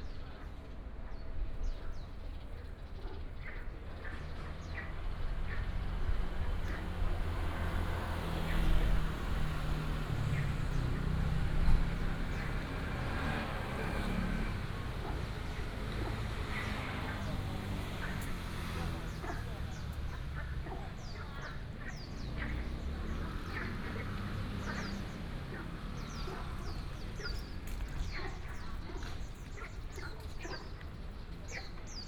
in the Park, sound of the birds, Traffic sound, frog sings
9 April 2017, ~17:00